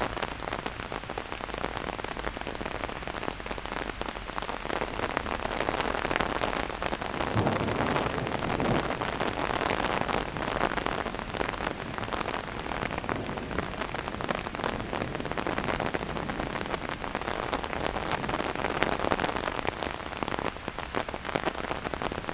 Innstraße, Innsbruck, Österreich - Rain on the umbrella
thunder and arin in the park, vogelweide, waltherpark, st. Nikolaus, mariahilf, innsbruck, stadtpotentiale 2017, bird lab, mapping waltherpark realities, kulturverein vogelweide